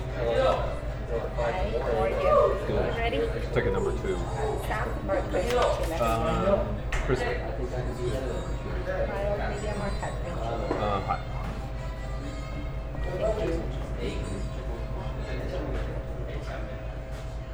neoscenes: Eldorado, waiting for dinner